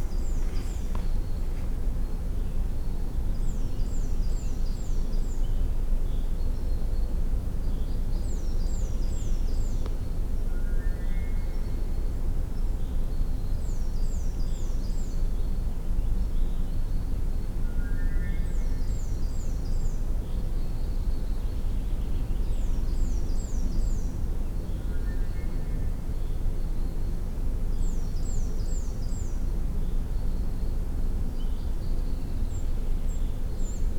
Eurovelo R10 - melody in the forest
a few rising notes sounding in the forest. besides general direction, i was not able to figure out what was the source of the melody. clearly a manmade sound but no idea what it could be. (roland r-07)
powiat wejherowski, pomorskie, RP, 11 June 2019